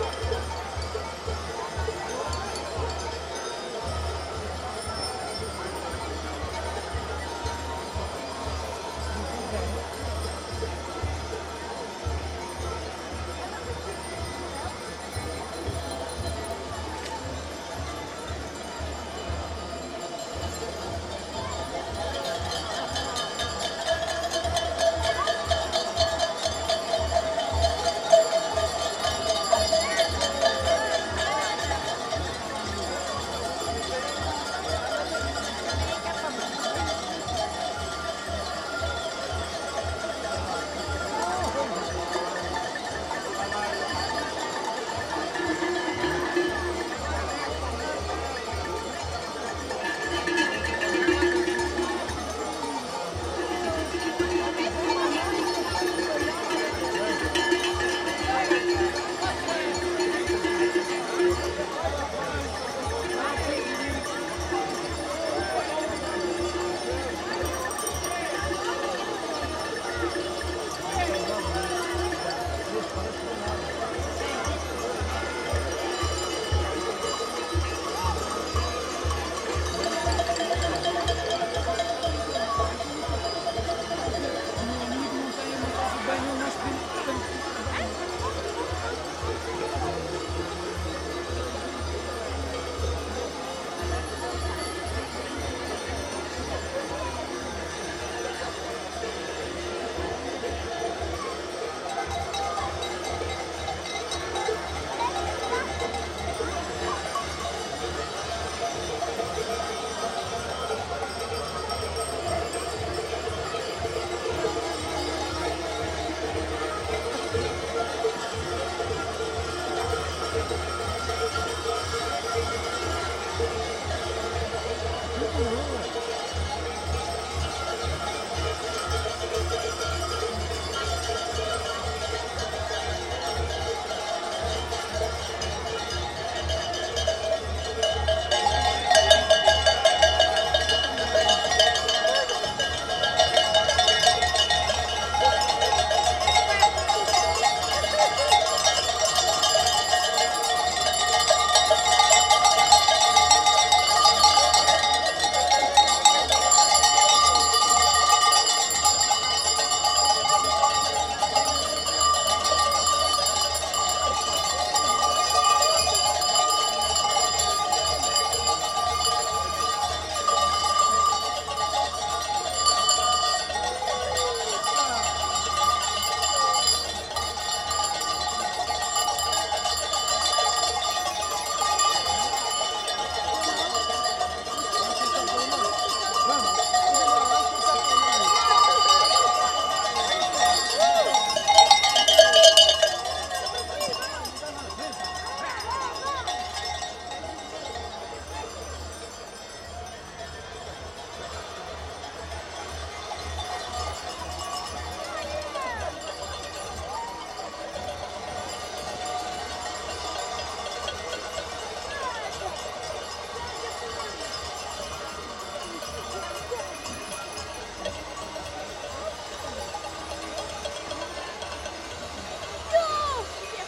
Castelo de Vide, Portugal
Chocalhada. Popular sound action were everyone uses bellsto make sounds throug the city.